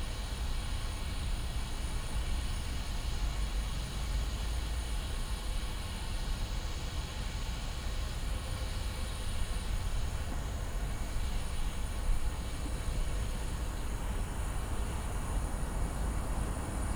Scheepmakersstraat, Den Haag - gas kasten
hissing from gas cabinets. passing cars and trains. Soundfield Mic (ORTF decode from Bformat) Binckhorst Mapping Project
The Hague Center, The Netherlands, 28 February